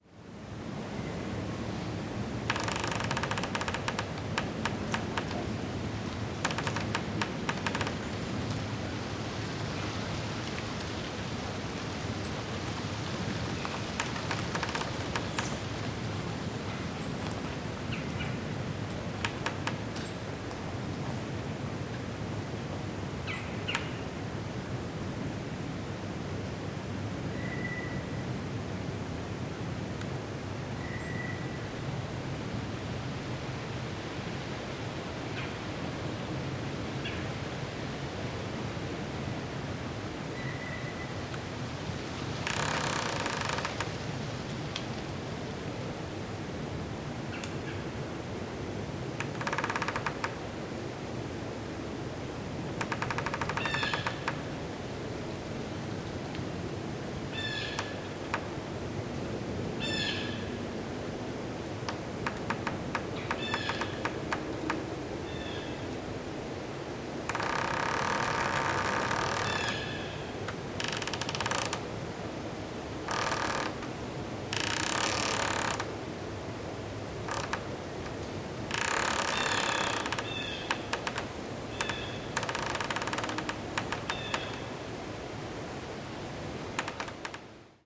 Creeky Tree Lowden Miller State Forest
A creeky pine in Lowden Miller State Forest.